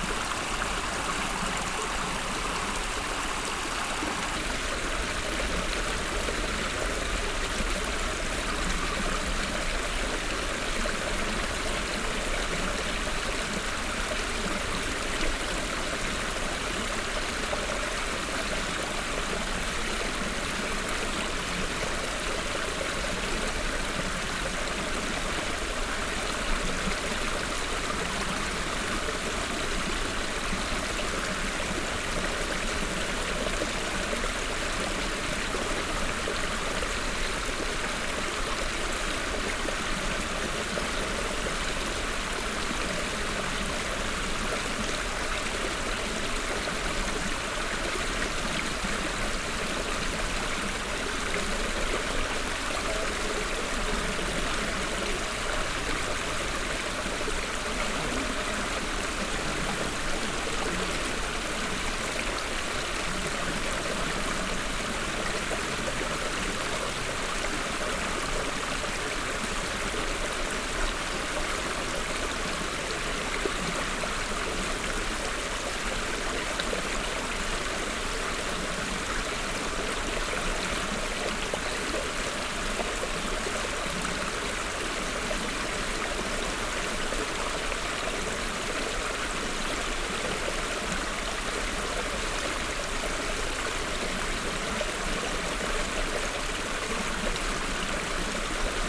Ottignies-Louvain-la-Neuve, Belgique - Malaise river
The Malaise river, a small river in the woods.
Ottignies-Louvain-la-Neuve, Belgium, 10 July 2016, 15:00